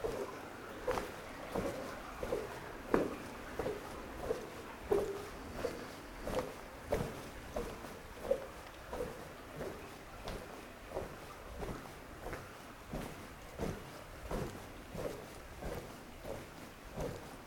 Differdange, Luxembourg - Underground mine
A deep underground mine ambience, walking in water, mud and abandoned tunnels.
22 November 2015